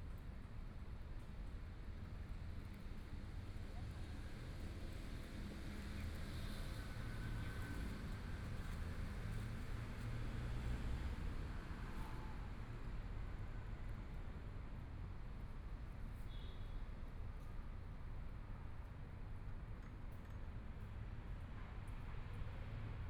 Night in the park, Traffic Sound
Please turn up the volume
Binaural recordings, Zoom H4n+ Soundman OKM II